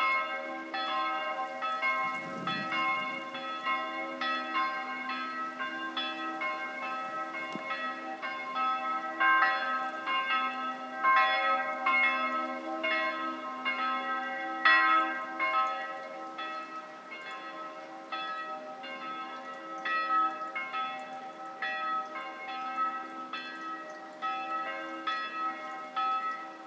Faistenoy, Oy-Mittelberg, Deutschland - church bells and melt water in the village
TASCAM DR-100mkII with integrated Mics
21 October 2014, 08:43